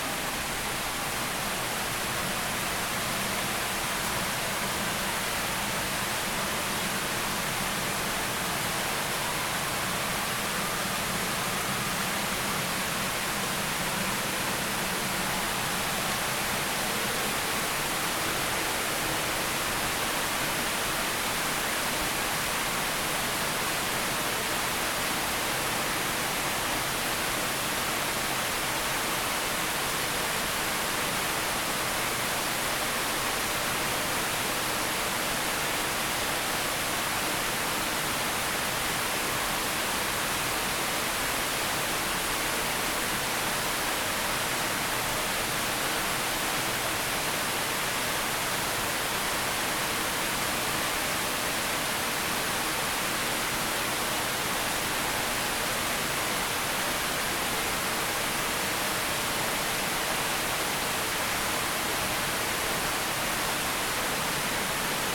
Fountain's - B Cente41.88N-87.61W
Recorded on Zoom H4N. Listening to the stepped waterfall from below on the West side of the fountain.
IL, USA, 14 June 2017